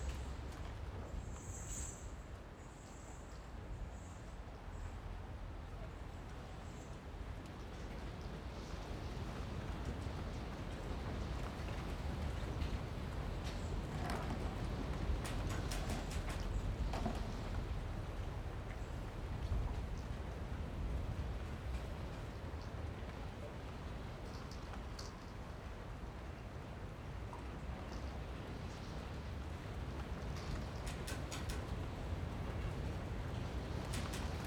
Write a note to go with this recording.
Fishing port side, Windy, Zoom H6 + Rode NT4